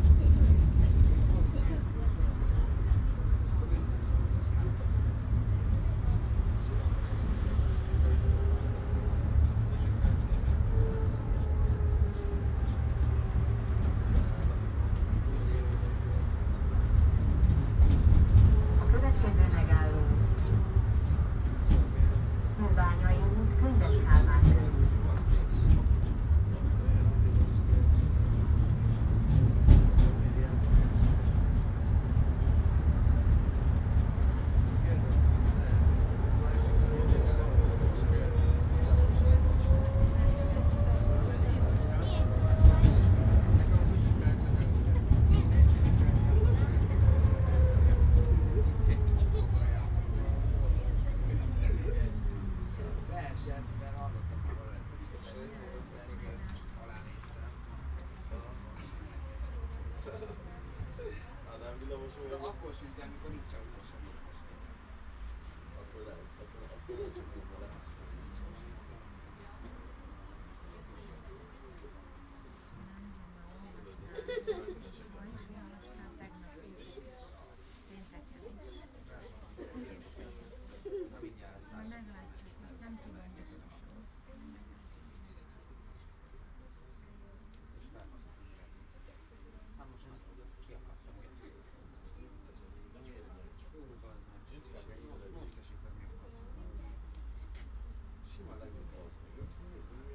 Binaural recording of a tram ride from Arena to Könyves Kálmán körút.
Recorded with Soundman OKM on Zoom H2n.
Közép-Magyarország, Magyarország, January 2017